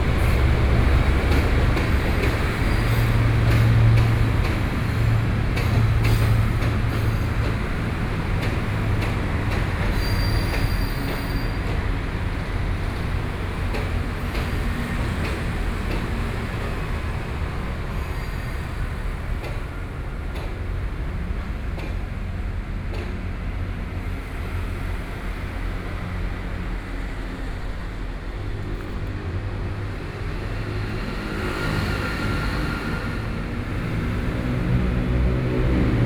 Dongda Rd., Hsinchu - Train traveling through
Train traveling through, Traffic Noise, Sony PCM D50 + Soundman OKM II
Hsinchu City, Taiwan, 26 September 2013